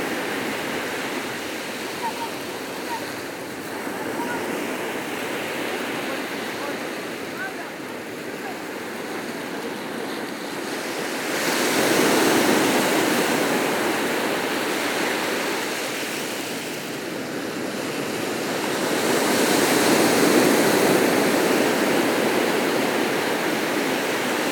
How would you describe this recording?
Majestic sea on the marvellous 'conche des baleines' beach. It literally means the beach of the whales, because on the past a lot of whales run aground here.